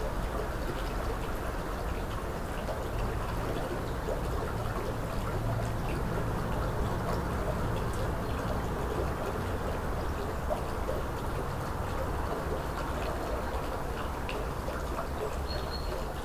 Ein Tag an meinem Fenster - 2020-03-23
23.03.2020
Die Bundesregierung erlässt eine Verordnung über vorübergehende - zunächst bis 19.04.2020 - geltende Ausgangsbeschränkungen zur Eindämmung der Verbreitung des neuartigen Coronavirus SARS-CoV-2 in Berlin.
Das Klangumfeld wird sich ändern, gewaltig.
Dies ist ein Versuch einer Dokumentation...
Tag 1
heima®t - eine klangreise durch das stauferland, helfensteiner land und die region alb-donau